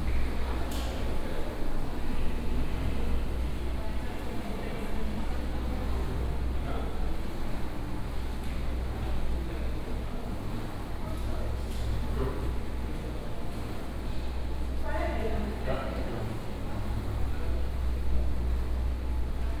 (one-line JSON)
{"title": "Airport Berlin Schönefeld SFX, Germany - walk to gate 65", "date": "2013-06-02 11:25:00", "description": "Sunday morning at airport Berlin Schönefeld, slow walk in terminal B\n(Sony PCM D50, OKM2 binaural)", "latitude": "52.39", "longitude": "13.52", "altitude": "41", "timezone": "Europe/Berlin"}